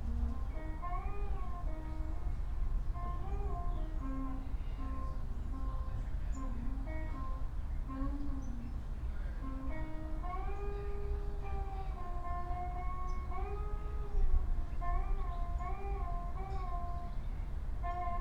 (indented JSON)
{
  "title": "Berlin Bürknerstr., backyard window - guitar player",
  "date": "2014-02-06 13:40:00",
  "description": "guitar player practising in the neighbourhood\n(PMC D50, Primo DIY)",
  "latitude": "52.49",
  "longitude": "13.42",
  "altitude": "45",
  "timezone": "Europe/Berlin"
}